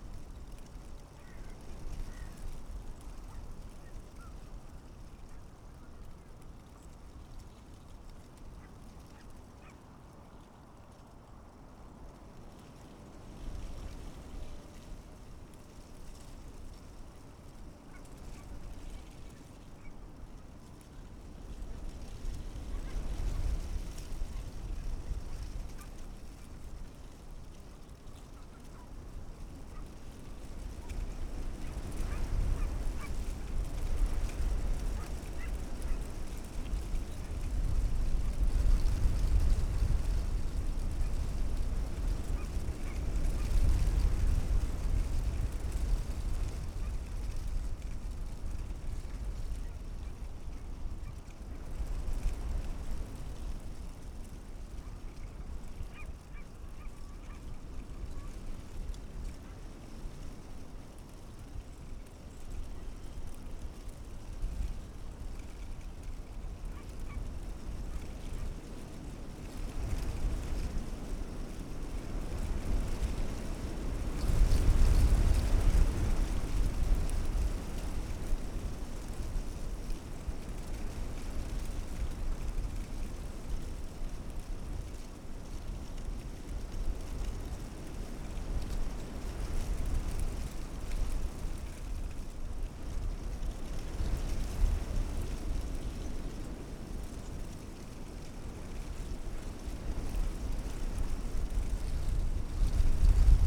berlin: tempelhofer feld - the city, the country & me: willow tree
dry leaves of a willow (?) tree rustling in the wind
the city, the country & me: february 8, 2014
8 February, 14:10